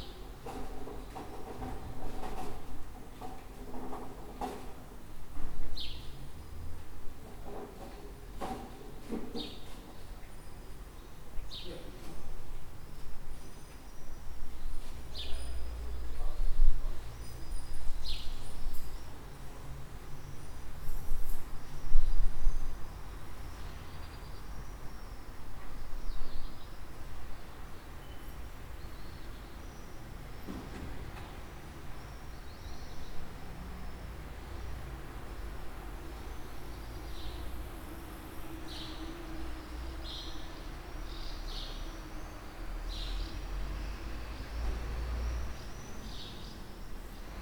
Mannheim, Deutschland - Schwalben Srasse Müllabfuhr Fahrrad
morning street sounds neckarstadt west july
Mannheim, Germany, 22 July 2016